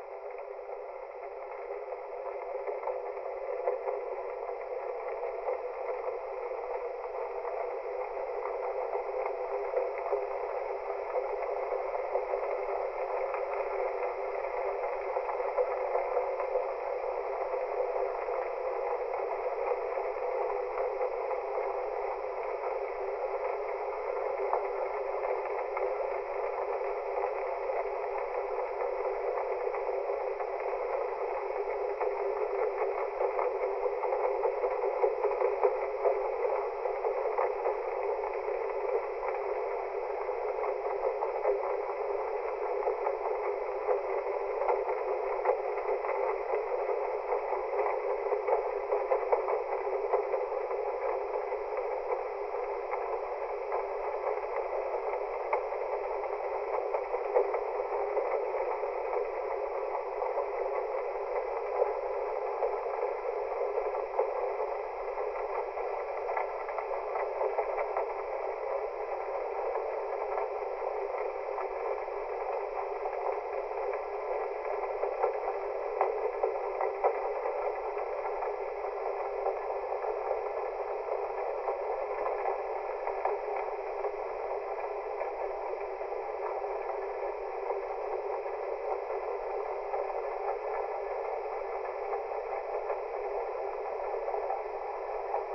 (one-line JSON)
{"title": "Strandbadweg, Unterwasser, Mannheim, Deutschland - Strandbad Unterwasserwelt", "date": "2022-06-07 10:42:00", "description": "Strandbad, Rhein, Unterwasser, Schiffsmotor", "latitude": "49.45", "longitude": "8.45", "altitude": "90", "timezone": "Europe/Berlin"}